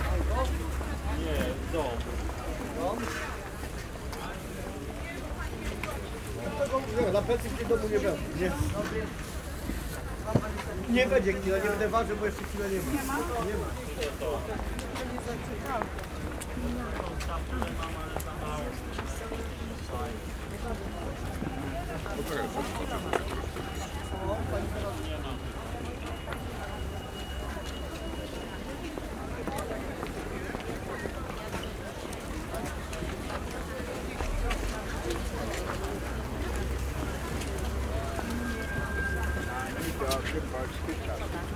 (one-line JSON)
{"title": "Old open market, Bałuty, Łódź, Poland", "date": "2012-04-06 13:45:00", "description": "binaural walk-through of the old open market in Baluty. Made during a sound workshop organized by the Museum Sztuki of Lodz.", "latitude": "51.79", "longitude": "19.45", "altitude": "206", "timezone": "Europe/Warsaw"}